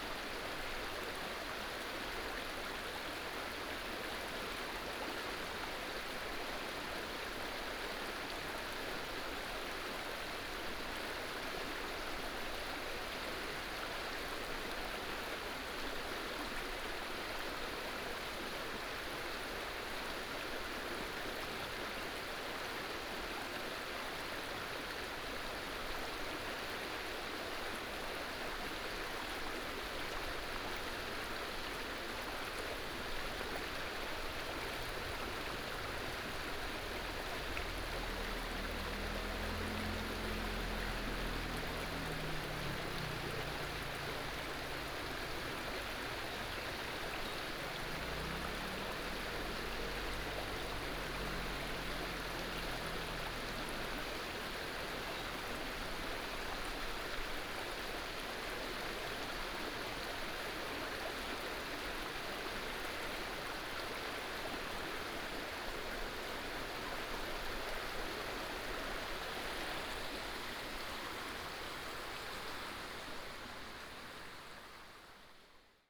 南河, Baguali, Nanzhuang Township - On the river bank
stream, On the river bank, Binaural recordings, Sony PCM D100+ Soundman OKM II
Miaoli County, Nanzhuang Township, 15 September 2017